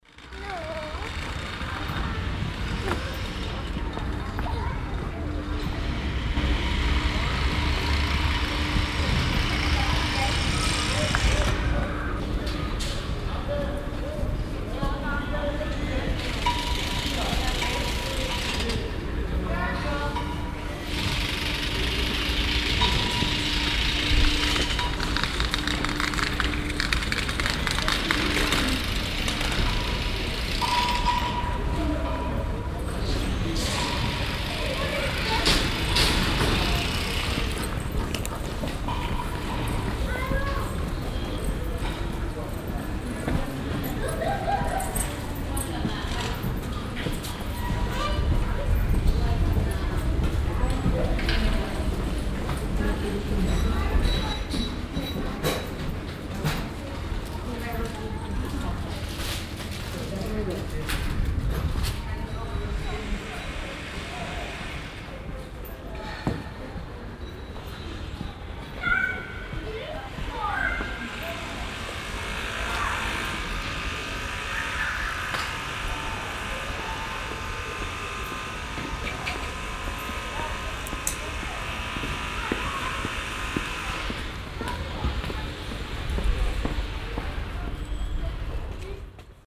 monheim, neustadt, ernst reuter platz
nachmittags am platz zwischen den wohnblöcken, schritte und baulärm
soundmap nrw:
social ambiences, topographic field recordings